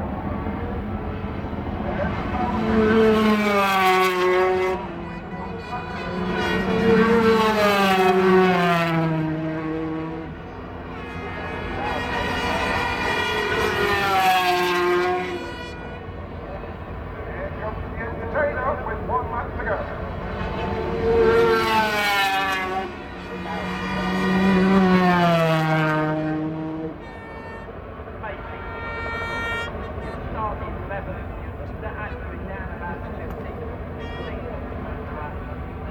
Castle Donington, UK - British Motorcycle Grand Prix 2001 ...
500cc motorcycle race ... part two ... Starkeys ... Donington Park ... the race and associated noise ... Sony ECM 959 one point stereo mic to Sony Minidisk ...